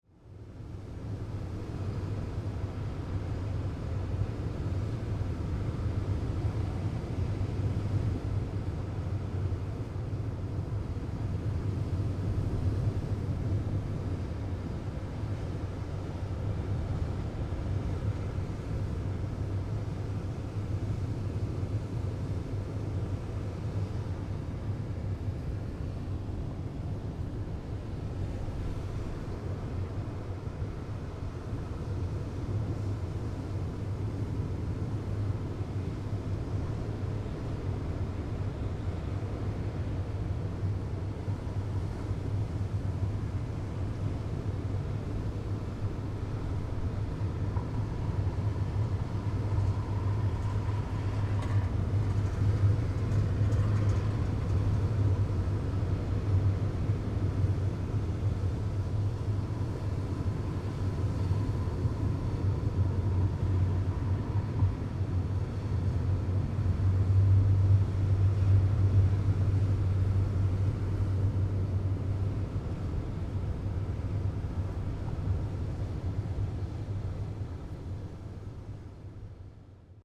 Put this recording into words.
In the hills of rock tunnel inside, sound of the waves, Zoom H6 +Rode NT4